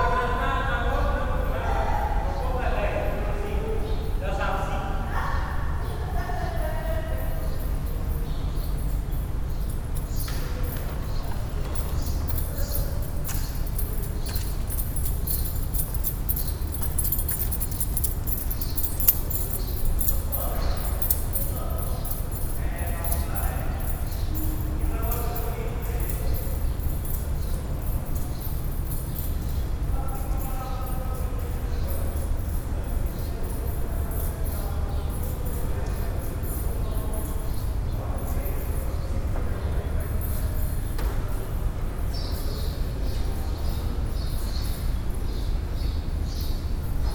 Barreiro, Portugal - Abandoned Train Station
Abandoned Train Station, large reverberant space, people passing, birds, recorded with church-audio binaurals+ zoom H4n
11 September 2013